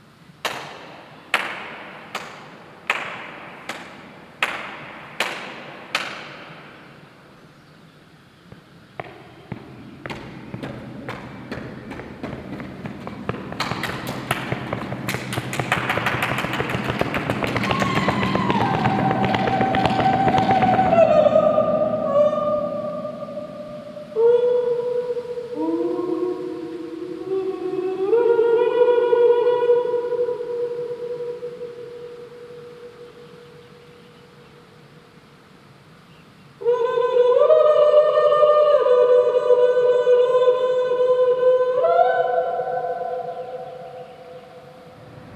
Rue des Ardennes, Saint-Hubert, Belgique - Reverberation under the bridge.
Voice and percussion improvisation by Alice Just.
Water on the right, birds, cars passing under the bridge.
Tech Note : SP-TFB-2 binaural microphones → Olympus LS5, listen with headphones.